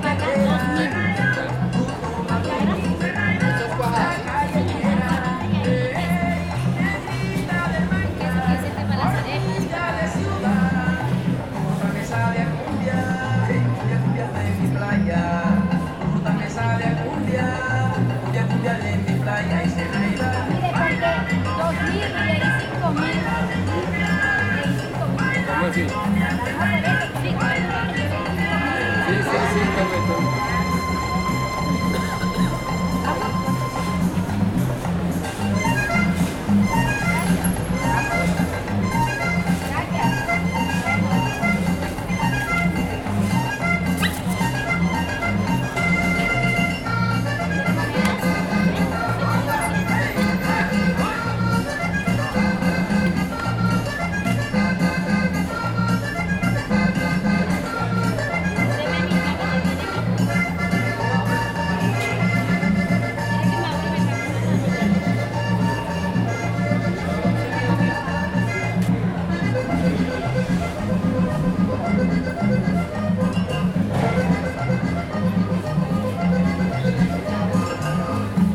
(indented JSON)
{
  "title": "Villavicencio, Meta, Colombia - Mercados Campesinos 7 Marzo 2015",
  "date": "2015-03-07 09:10:00",
  "description": "Ambiente sonoro en los Mercados Campesinos que se realizan cada 15 días en el polideportivo del barrio la Esperanza séptima etapa.",
  "latitude": "4.13",
  "longitude": "-73.63",
  "altitude": "441",
  "timezone": "America/Bogota"
}